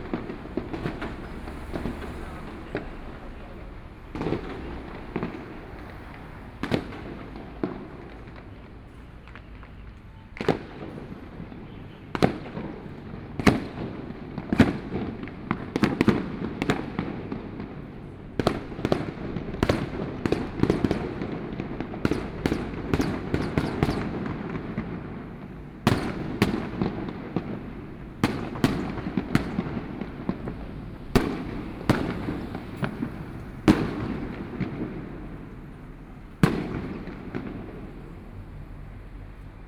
Ligong St., Taipei City - The sound of fireworks
The sound of fireworks
Binaural recordings, Sony PCM D50 + Soundman OKM II